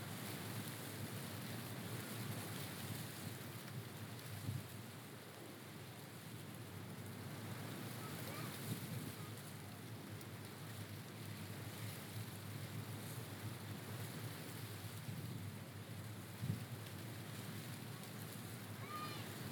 Sitra, Bahrain - Palm Leaves in the Wind - Sitra Port, Bahrain
Recording of a Palm tree in the wind at the fisherman's port, Sitra Kingdom of Bahrain.